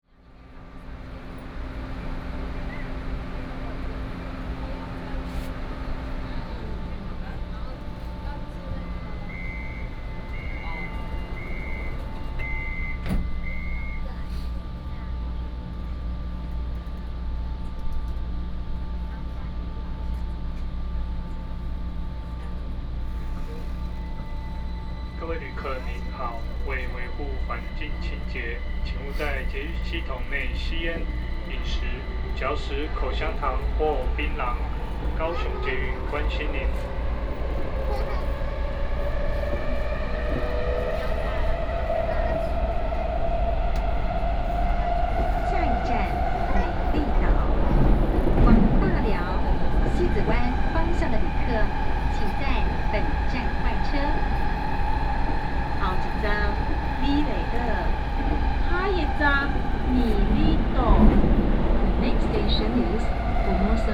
from Kaohsiung Main Station to Formosa Boulevard station
13 May 2014, 19:28